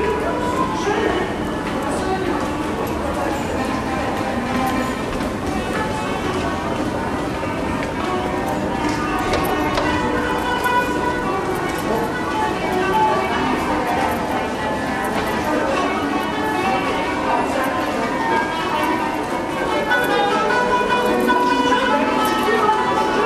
Levent metro station, a week of transit, monday morning - Levent metro station, a week of transit, wednesday afternoon
As sounds go by... will tomorrow be like today?